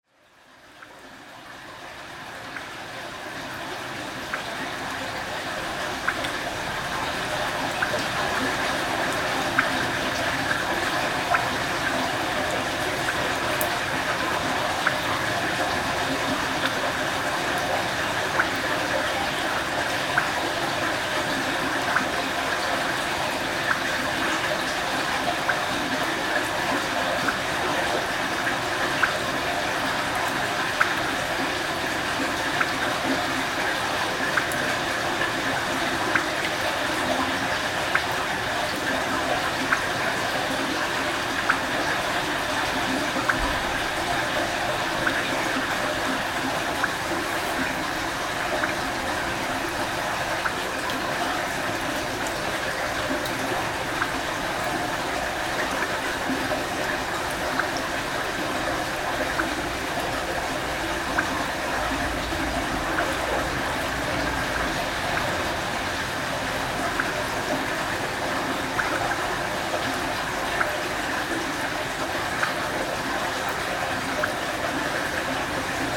Mont-Saint-Guibert, Belgique - Sewer
A sewer sound during rain time. I was using the two microphones on the manhole. Night. Rain. Strange posture... in fact very strange posture I could say ! The cops went, had a look on me and... they didn't stop :-D
14 February 2016, 20:53